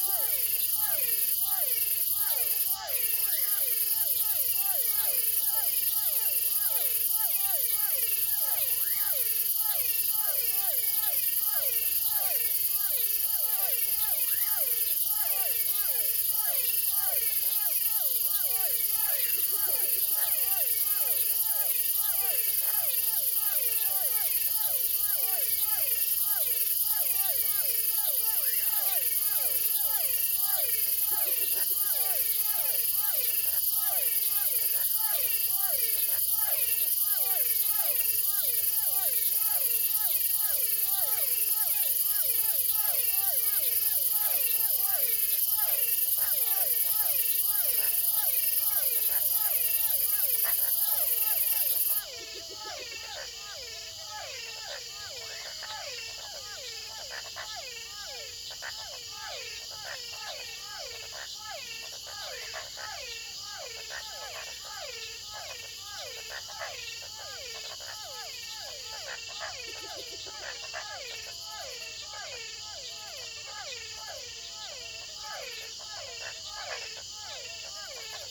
Gran Sabana, Venezuela - Toads and frogs during the night in Gran Sabana
During the night in the forest in Venezuela, frogs, toads and crickets singing...
Recorded close to Santa Elena de Uairen in Gran Sabana Venezuela.
Sound recorded by a MS setup Sennheiser Microphone MKH50+MKH30
Sound Devices 302 mixer + Sound Devices 744T recorder
MS is encoded in STEREO Left-Right
recorded in february 2011
Bolívar, Venezuela, 13 February 2011, 20:00